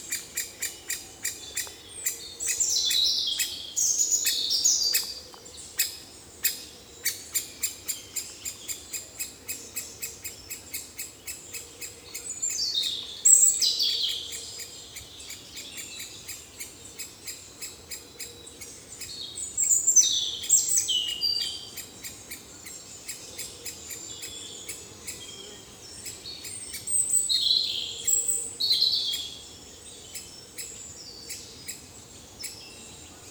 Thuin, Belgique - Birds in the forest
Anxious Great Spotted Woodpecker, lot of juvenile Great Tit, juvenile Eurasian Blue Tit, Robin, blackbird. 10:50 mn, it's a small fight.
Thuin, Belgium, 3 June 2018, 09:40